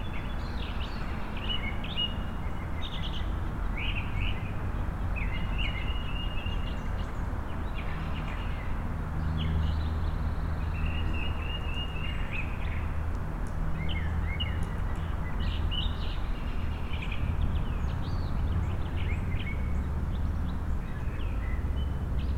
Alpharetta Hwy, Roswell, GA, USA - Birds & Traffic In Roswell Professional Park
Birdsong in the middle of a small business park. I was present in this location to drop off vintage audio equipment to a repair shop, and these are the sounds that could be heard just a few shops down. Traffic sounds can be heard from the nearby Alpharetta Highway, and an HVAC fan can be heard to the right. Other sounds can be heard from the surrounding buildings. EQ was done in post to reduce rumble.
[Tascam DR-100mkiii & Roland CS-10EM binaural earbuds w/ foam covers & fur]